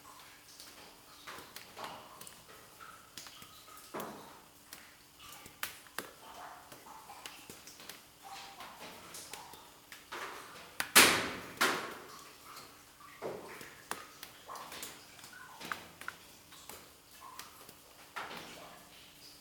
Audun-le-Tiche, France - The pit
At the bottom of the 90 meters mining pit. Some small drops are falling.